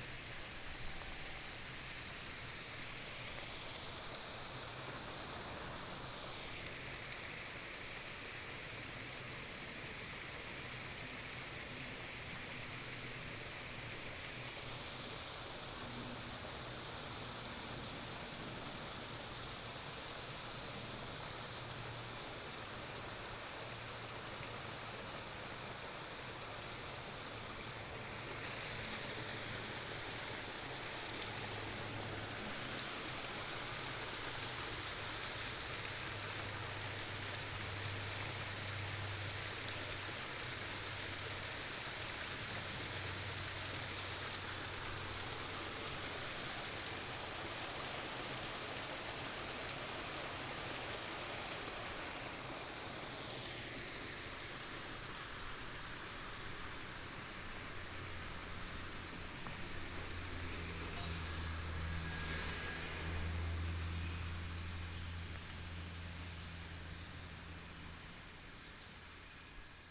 羅馬公路, 長興里 Fuxing Dist., Taoyuan City - stream
stream, Cicada, traffic sound, birds sound, The plane flew through
14 August, 16:05